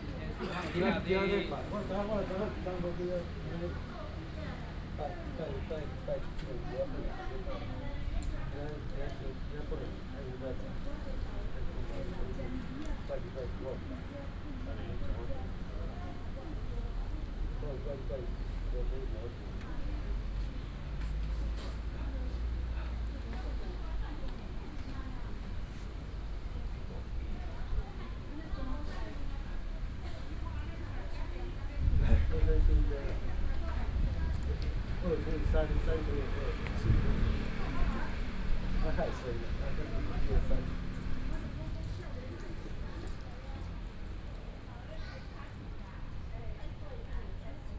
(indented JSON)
{
  "title": "敦親公園, Da'an Dist. - Hot weather",
  "date": "2015-06-28 17:40:00",
  "description": "Group of elderly people in the park, Hot weather",
  "latitude": "25.02",
  "longitude": "121.54",
  "altitude": "16",
  "timezone": "Asia/Taipei"
}